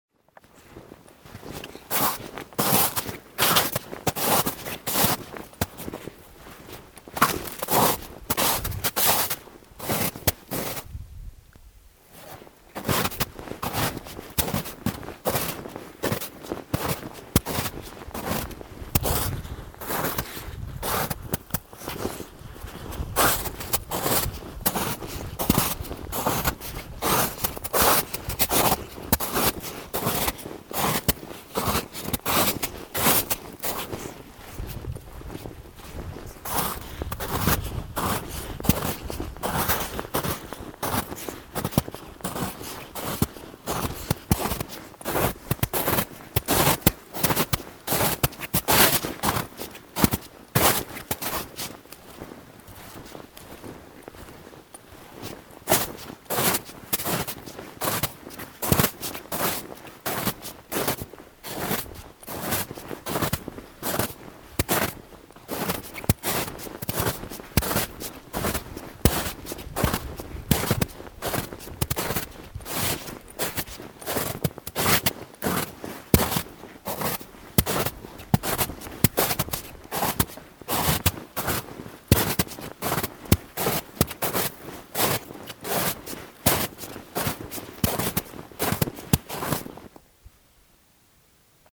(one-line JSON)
{"title": "Hures-la-Parade, France - Snowdrifts", "date": "2015-03-01 07:00:00", "description": "Walking in enormous snowdrift, in a holowed path. Winter is far to be finished, in this rough area.", "latitude": "44.26", "longitude": "3.40", "altitude": "1047", "timezone": "Europe/Paris"}